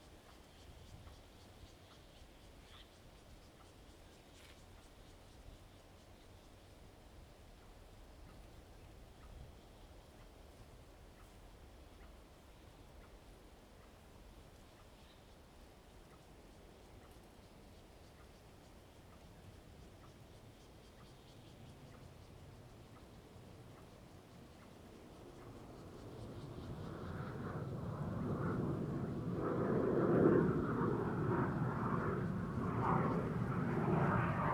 都蘭村, Donghe Township - Small village
Small village, the sound of aircraft, The weather is very hot
Zoom H2n MS +XY
Taitung County, Taiwan, 6 September